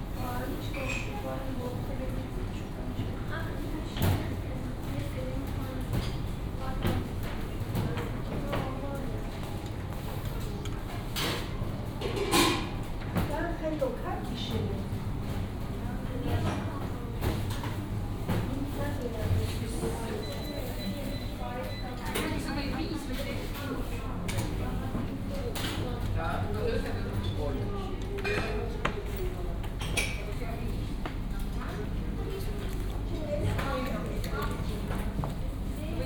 Tallinn, Balti jaam, cafe
coffe break during the tuned city workshop.